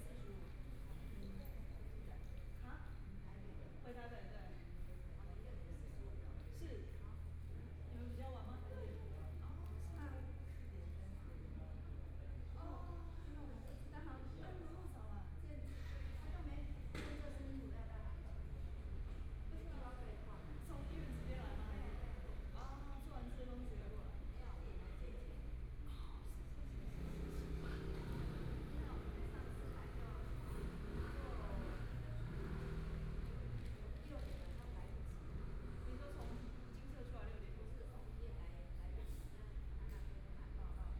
Hualien Station, Taiwan - The station hall at night
The station hall at night
Please turn up the volume
Binaural recordings, Zoom H4n+ Soundman OKM II